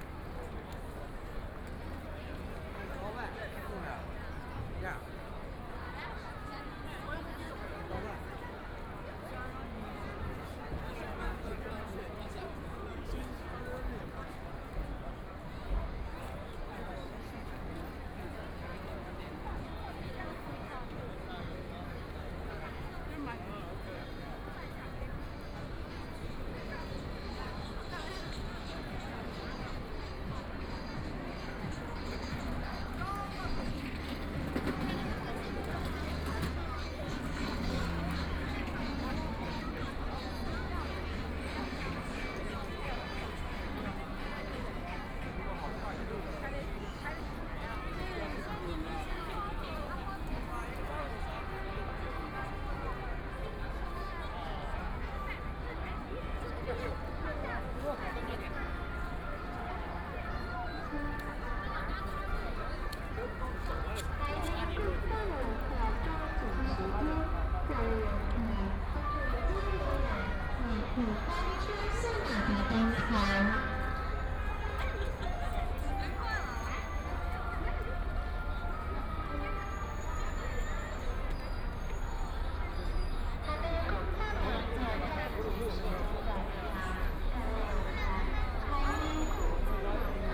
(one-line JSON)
{"title": "Nanjin Road, Shanghai - Store shopping district", "date": "2013-11-30 20:06:00", "description": "walking in the Store shopping district, Walking through the streets of many tourists, Binaural recording, Zoom H6+ Soundman OKM II", "latitude": "31.24", "longitude": "121.48", "altitude": "10", "timezone": "Asia/Shanghai"}